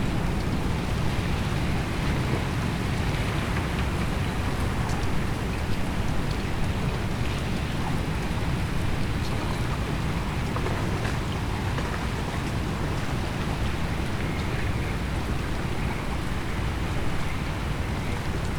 berlin, am schildhorn: havelufer - the city, the country & me: alongside havel river
water pushes small ice sheets against the "ice edge" of a frozen cove of the havel river and strong wind blows small pieces of ice over the ice of the cove
the city, the country & me: march 24, 2013